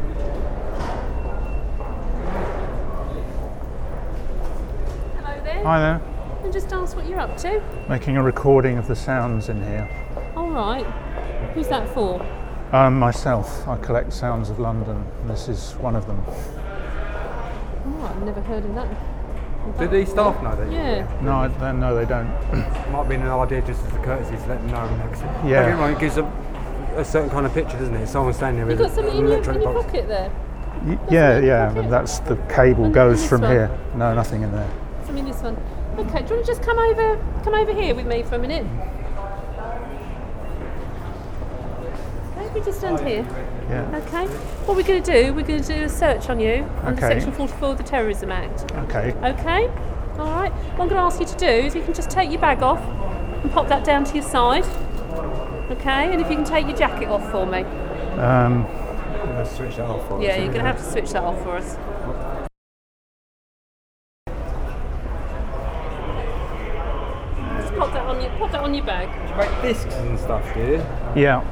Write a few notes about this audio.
One of the consequences of the war on terror and resulting security paranoia as it effected recordists, photographers, film makers and even some tourists in the UK. This law was repealed in 2010 after it had been declared a breach of human rights by the European Court of Human Rights. Today recording in London is being restricted by the increasing privatisation of what was once public space and one has to deal with security guards of buildings and other premises.